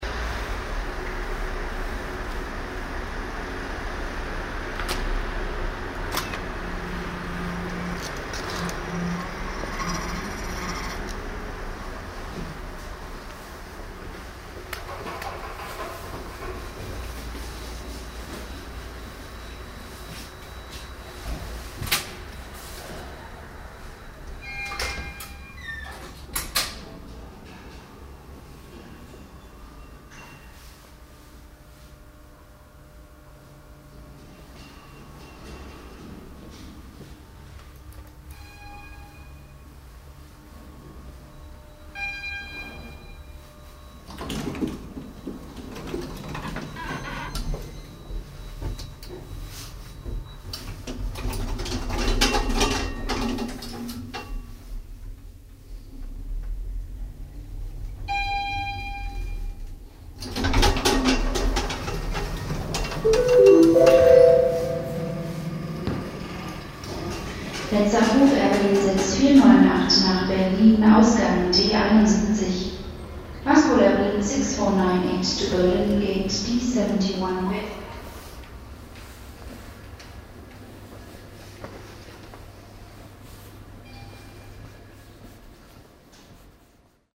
cologne - bonn, airport, automatische tuer
soundmap: köln/ nrw
automatische tür im zugangsbereich von der parkebene, abends
project: social ambiences/ listen to the people - in & outdoor nearfield recordings - listen to the people
5 June 2008